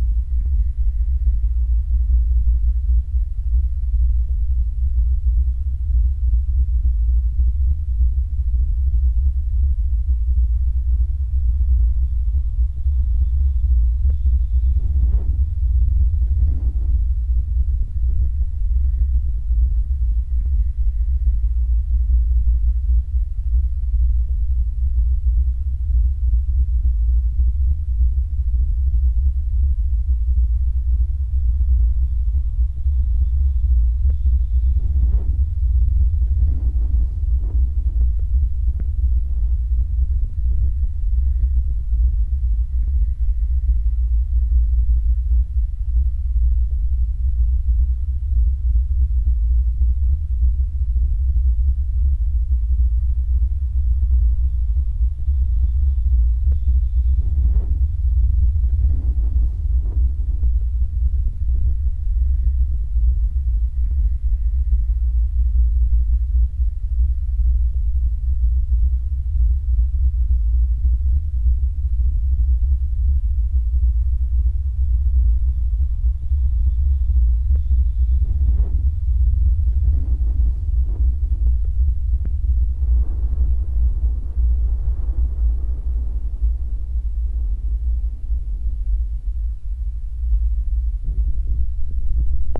Olancha, CA, USA - Telephone Pole with Aeolian Phenomena
Metabolic Studio Sonic Division Archives:
Headphones required. Telephone pole vibrating its fundamental frequency in aeolian fashion from wind blowing across lake. Very low frequency. Recorded with contact mic attached directly to telephone pole.